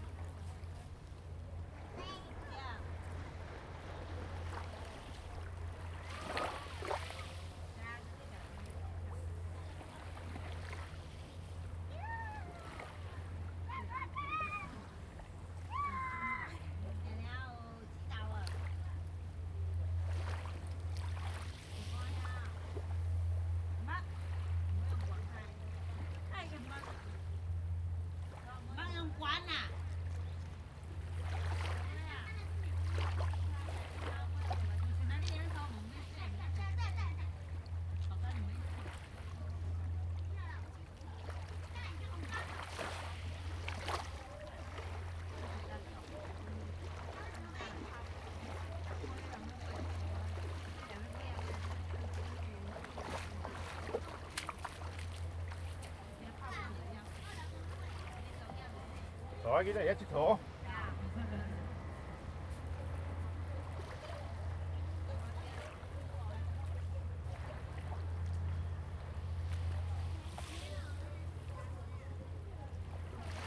漁福漁港, Hsiao Liouciou Island - Small beach
Small beach, Sound of the waves
Zoom H2n MS +XY
Liuqiu Township, Pingtung County, Taiwan